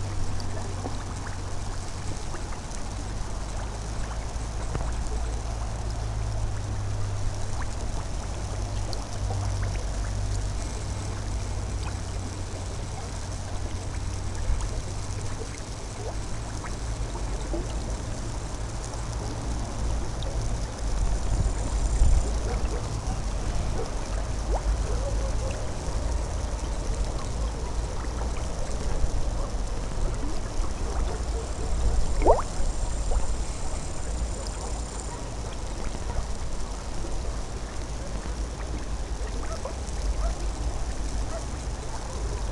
Stankovany, Slovenská republika - Mineral spring in Rojkov
mineral spring in Rojkov travertine heap
Stankovany, Slovakia, 29 August 2012